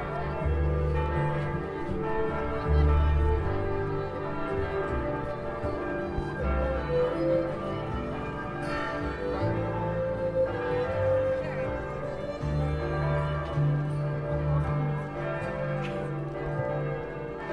Šventaragio g., Vilnius, Lithuania - Bell Tower Bells
The Bell Towers chiming
Vilniaus apskritis, Lietuva, 5 March, 15:30